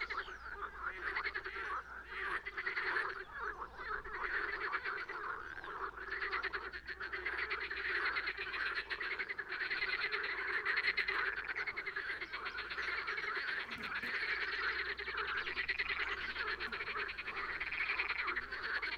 Groß Neuendorf, Oder - auf dem Deich / on the dike
water rising, meadows will soon be flooded, many frogs, people picknicking nearby
23 May 2010, 12:55